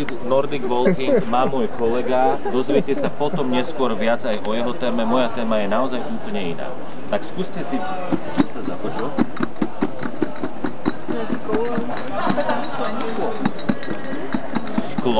hradec kralove, open air program - slovenskyvoda